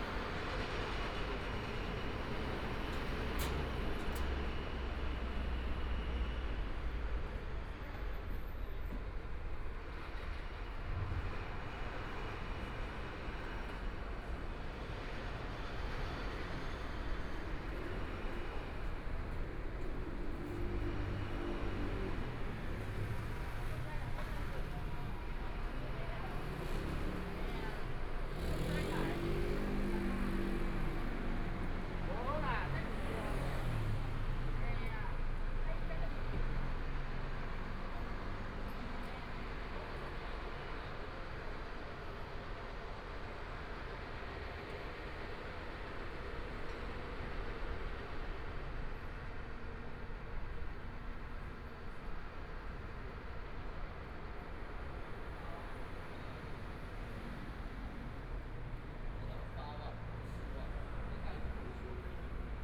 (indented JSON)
{
  "title": "Shuangcheng St., Taipei City - walking in the Street",
  "date": "2014-02-10 15:34:00",
  "description": "walking In the Street, Traffic Sound, Motorcycle Sound, Pedestrian, Construction site sounds, Clammy cloudy, Binaural recordings, Zoom H4n+ Soundman OKM II",
  "latitude": "25.07",
  "longitude": "121.52",
  "timezone": "Asia/Taipei"
}